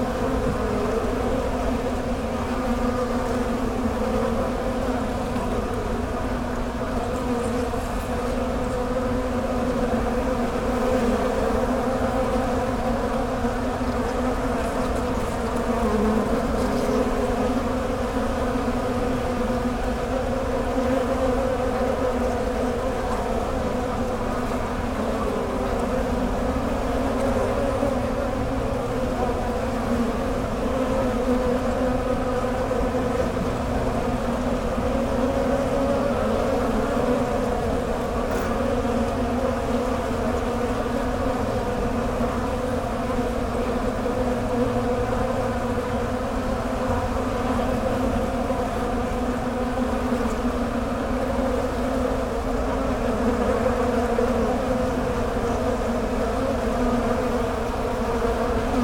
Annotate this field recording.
honey bee hive recorded in a just-collapsed oak tree